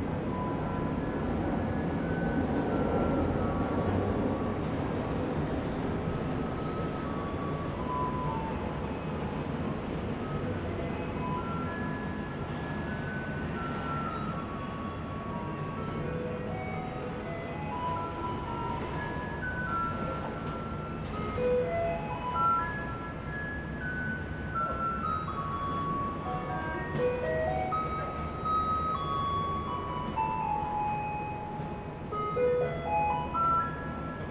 Rende 2nd Rd., Bade Dist. - Clear trash time
Clear trash time, Garbage truck arrived, traffic sound, Zoom H2n MS+XY+ Spatial audio
Bade District, Taoyuan City, Taiwan, 28 November 2017